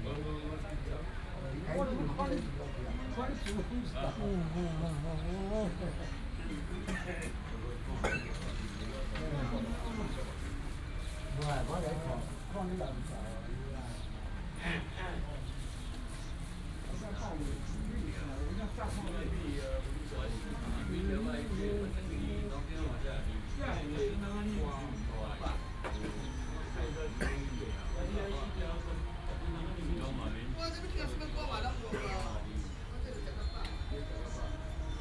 宜蘭中山公園, Luodong Township - in the park
in the park, A group of old people playing cards, Binaural recordings, Sony PCM D100+ Soundman OKM II
Yilan County, Taiwan, 9 December 2017